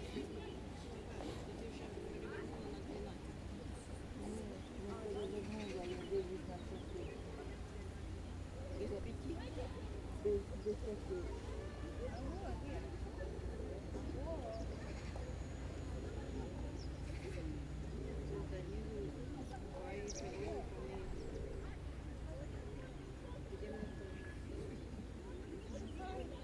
вулиця Гонти, Вінниця, Вінницька область, Україна - Alley12,7sound3thecenterofthebeach
Ukraine / Vinnytsia / project Alley 12,7 / sound #3 / the center of the beach